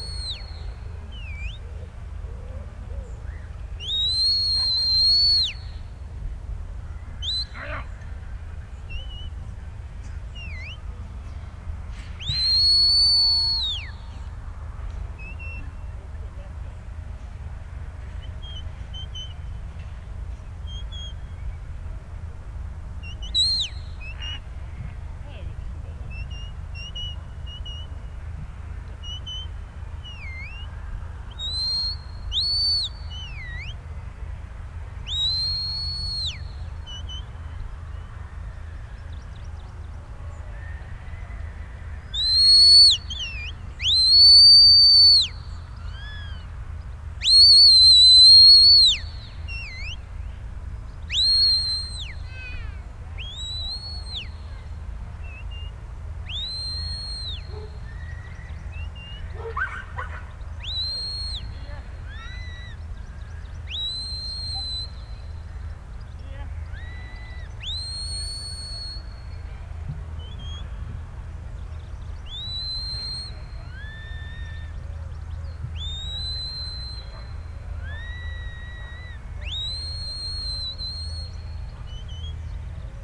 Back Ln, York, UK - Ryedale Show ... sheepdog trials ...
Sheepdog trials ... open lavaliers clipped to sandwich box ... plenty of background noise ...